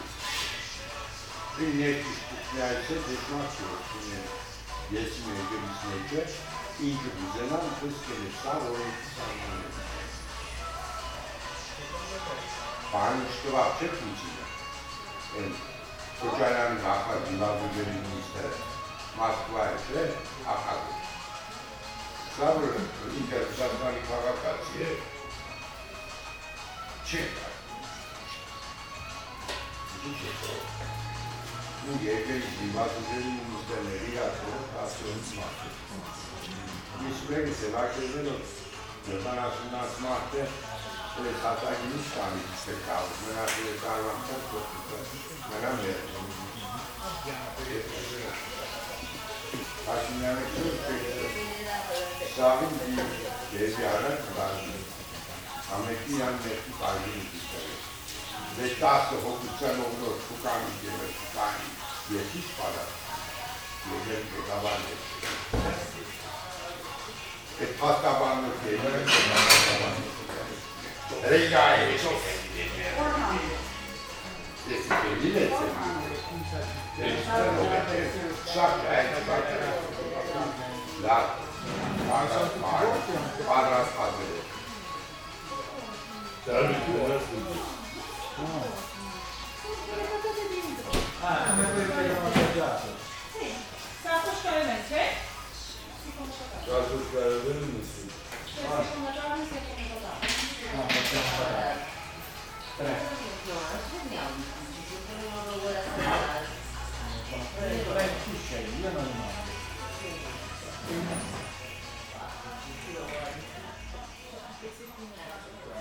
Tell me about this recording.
Into a sad bakery pastry, an old client is discussing with the old baker. It's the local market day. The baker looks so sad that Droopy character is a joker beside to this old man.